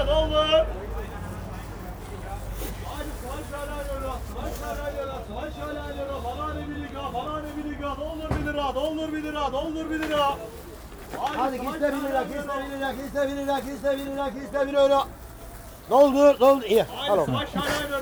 One of busy Turmstraßes most noticeable sounds